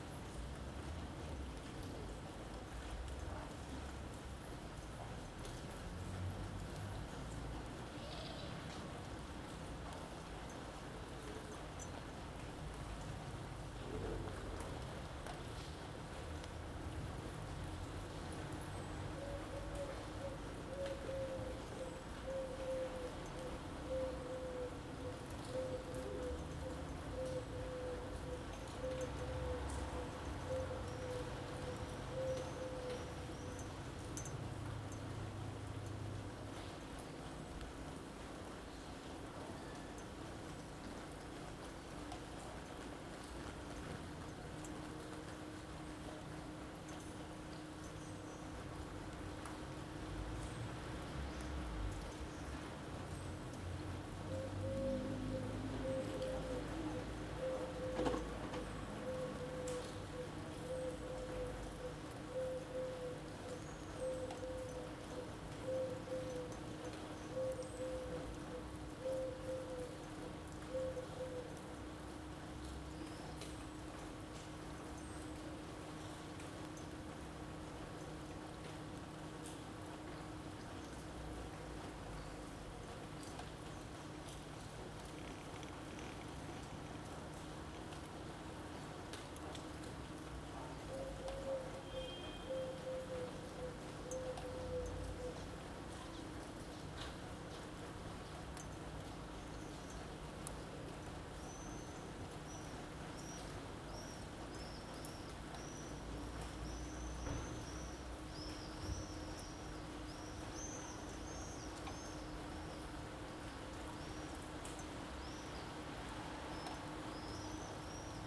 Carrer de Joan Blanques, Barcelona, España - Rain20042020BCNLockdown
Recording made from a window during the coiv-19 lockdown. It's raining and you can also hear several sounds from the city streets. Recorded using a Zoom H2n.
Catalunya, España, 20 April, 09:00